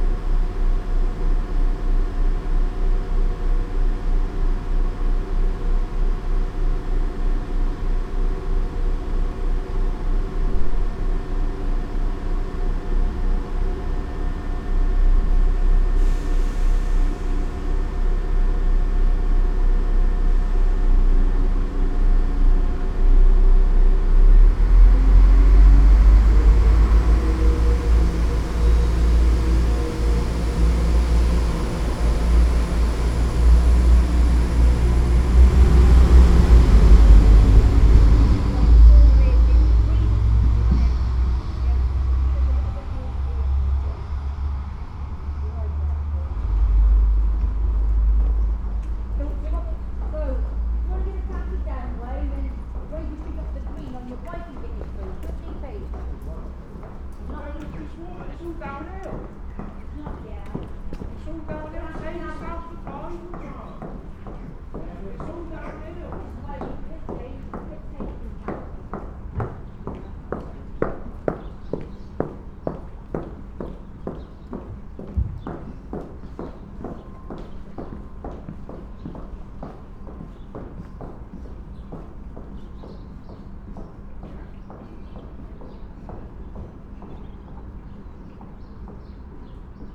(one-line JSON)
{"title": "A Train Arrives at Great Malvern Station.", "date": "2022-02-28 14:47:00", "description": "A small event. An announcement, a train arrives and a few people leave.\nMixPre 6 II with 2 Sennheiser MKH 8020s on the surface of the platform", "latitude": "52.11", "longitude": "-2.32", "altitude": "84", "timezone": "Europe/London"}